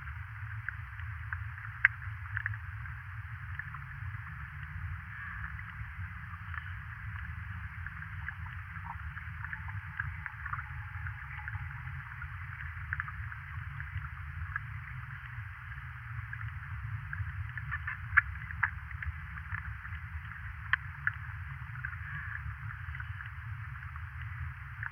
Kulionys, Lithuania, underwater
hydrophone. some low drone - probably from the wooden bridge vibrating in the wind...